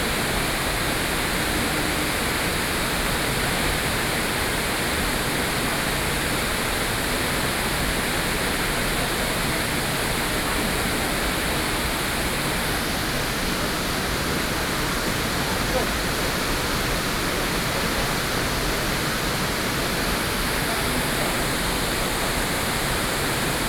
十分瀑布, Pingxi District, New Taipei City - Waterfall
New Taipei City, Taiwan, November 2012